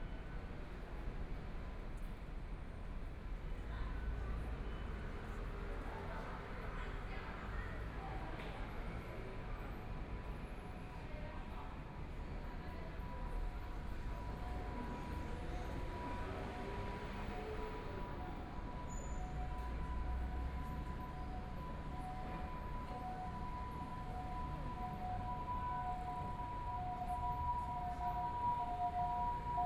Beitou, Taipei City - Tamsui Line (Taipei Metro)
from Qiyan Station to Fuxinggang Station, Binaural recordings, Zoom H4n+ Soundman OKM II
21 January, 18:17